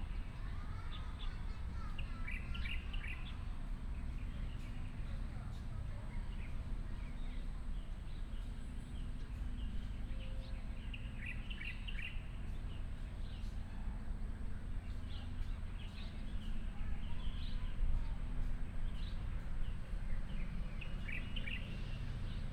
at the school, birds sound, sound of children, Dog barking